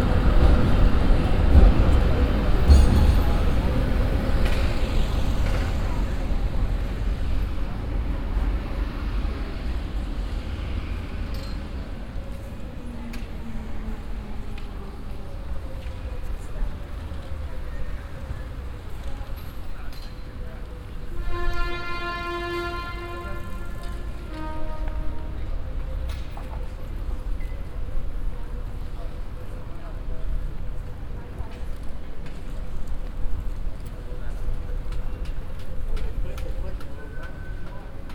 amsterdam, köningsplein, tram stop
a tram leaving the tram stop ringing a bell sign. international tourists crossing the street
international city scapes - social ambiences and topographic field recordings
6 July 2010, 16:46